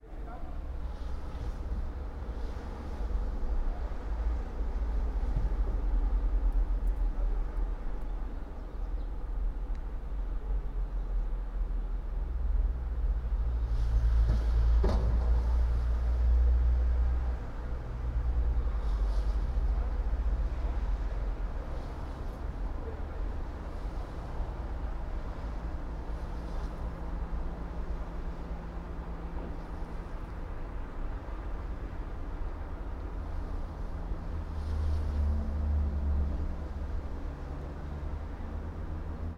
all the mornings of the ... - feb 22 2013 fri
Maribor, Slovenia, 22 February 2013, 07:30